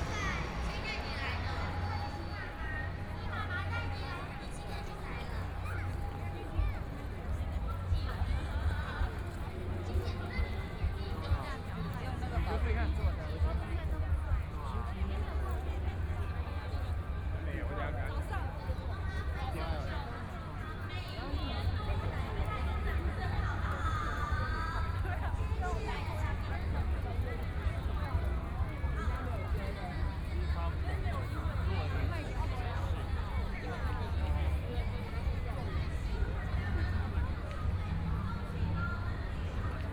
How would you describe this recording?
First Full Moon Festival, Walking through the park, Many tourists, Aircraft flying through, Traffic Sound, Binaural recordings, Please turn up the volume a little, Zoom H4n+ Soundman OKM II